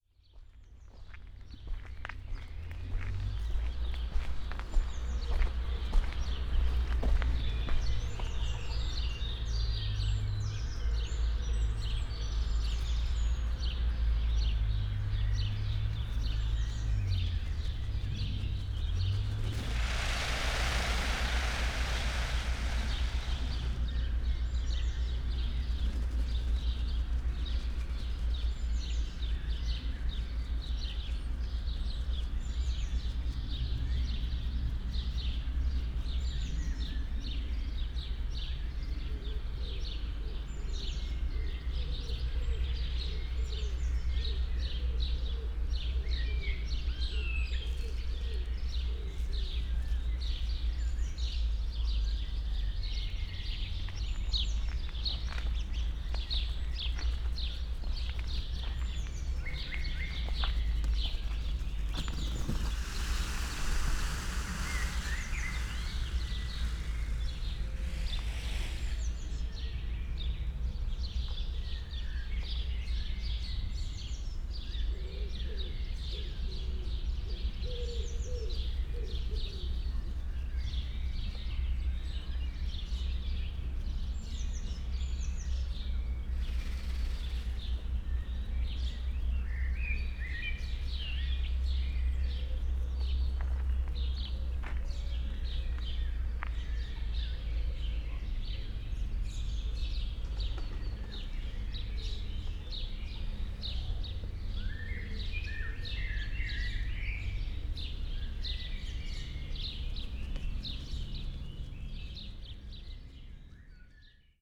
feathers, peacocks, doves, chickens